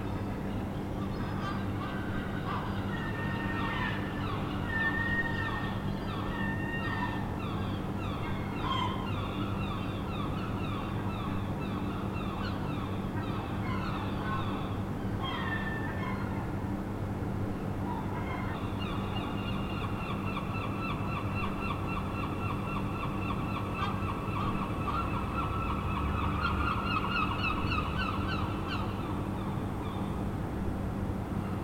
Brighton - Early Morning Seagulls
Seagulls, an early morning natural alarm clock
England, United Kingdom, 2 March 2011